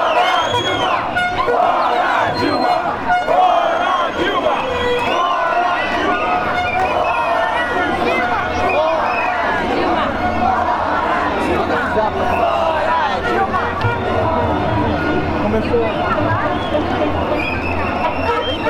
Calçadão de Londrina: Manifestação contra Dilma Rousseff - Manifestação contra Dilma Rousseff / Manifestation against Dilma Rousseff
Panorama sonoro: milhares de participantes de uma manifestação contra a presidenta Dilma Rousseff com apitos, cornetas, caminhões de som e palavras de ordem. A manifestação se originou na Avenida Higienópolis e percorreu todo o Calçadão em um domingo à tarde.
Thousands of participants in a demonstration against President Dilma Rousseff with whistles, horns, sound trucks and slogans. The demonstration originated in the Avenue Higienópolis and it crossed the whole Boardwalk on a Sunday afternoon.